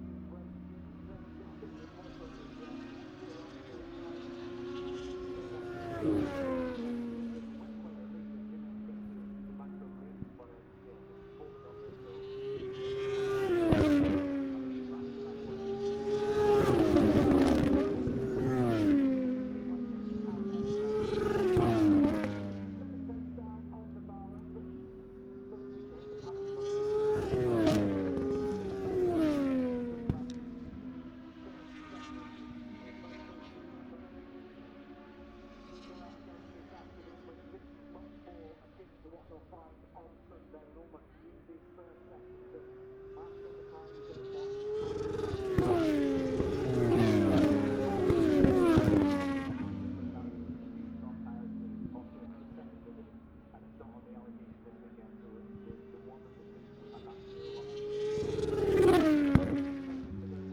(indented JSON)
{
  "title": "Silverstone Circuit, Towcester, UK - british motorcycle grand prix 2021 ... moto two ...",
  "date": "2021-08-27 10:55:00",
  "description": "moto two free practice one ... maggotts ... olympus ls 14 integral mics ...",
  "latitude": "52.07",
  "longitude": "-1.01",
  "altitude": "158",
  "timezone": "Europe/London"
}